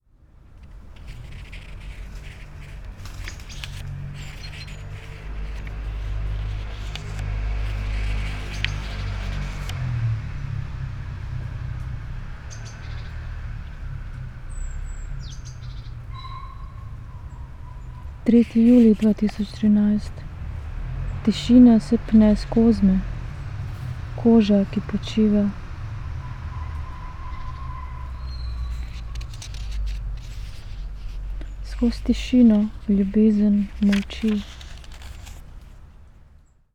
3. julij 2013
tišina se pne skozme
koža, ki počiva
skoz tišino ljubezen molči